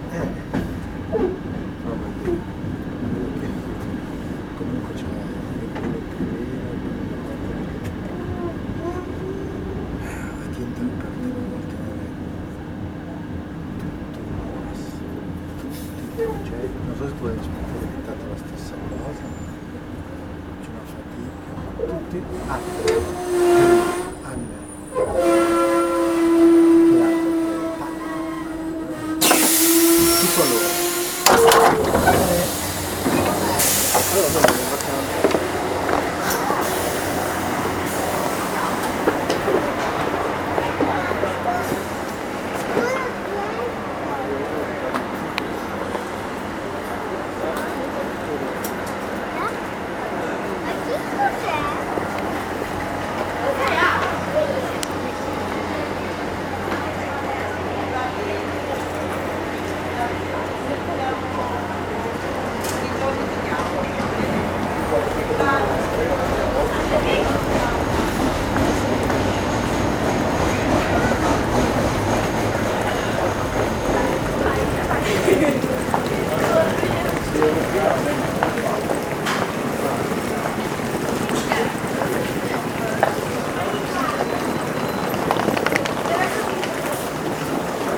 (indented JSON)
{
  "title": "Central railway station, Bologna, Italy - train connections",
  "date": "2012-10-30 15:16:00",
  "description": "A glimpse of modern life hurry: taking a train connection. Jumping off a train in arrival, moving faster trhough the crowd to reach the arriving connection and jumping on the next one in few minutes. A variety of loud sounds here.",
  "latitude": "44.51",
  "longitude": "11.34",
  "altitude": "47",
  "timezone": "Europe/Rome"
}